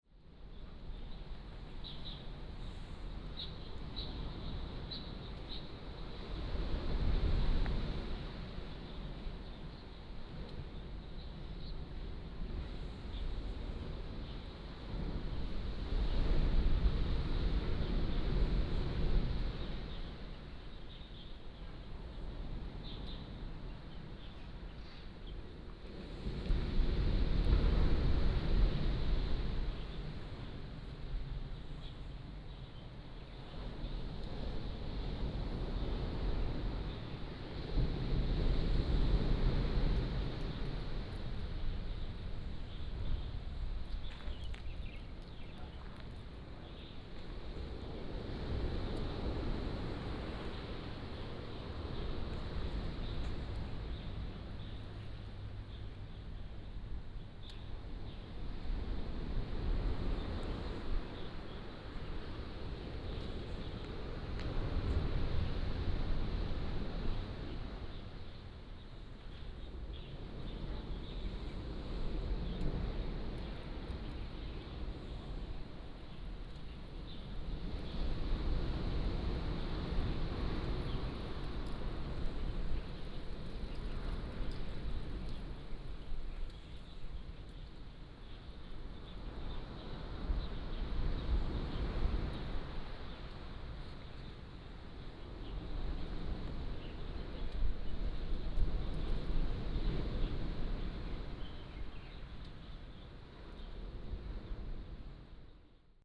午沙村, Beigan Township - Small port
Small port, Small village, Sound of the waves, Birdsong
福建省 (Fujian), Mainland - Taiwan Border, 13 October 2014